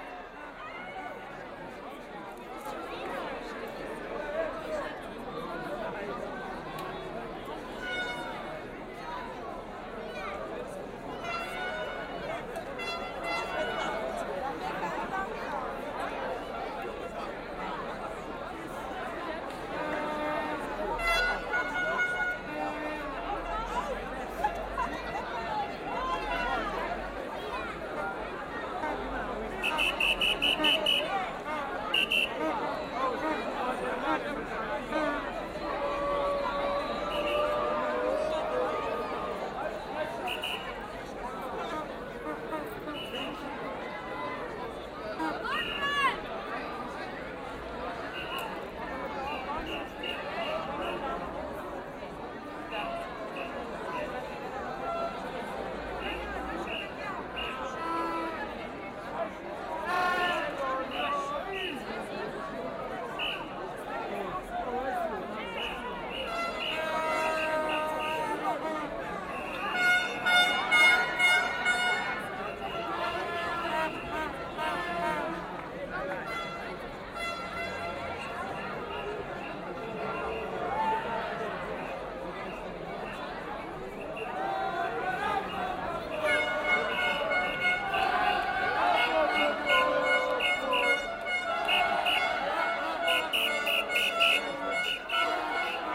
In the European Championship Italy won a match against Spain. The italian fans of Aarau walks through the city and meet at a circle. Signalhorns, singing and shouting.
Aarau, Switzerland, 27 June